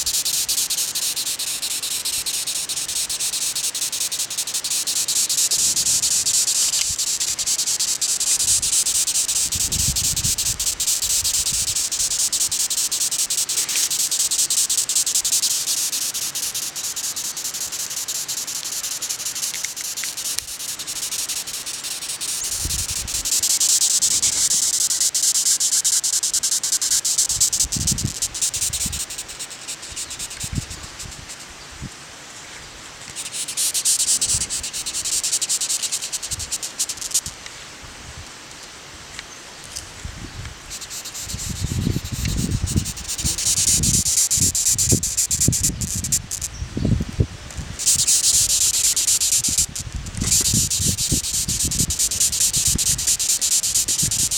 Sound of a cerambycidae bug (insect) São Sebastião da Grama - SP, Brasil - Sound of a cerambycidae bug (insect)
The cerabycidae is a insect who cut green and fresh branches in the top of trees to use it wood as food for it larvae.
São Paulo, Região Sudeste, Brasil, 22 February 2022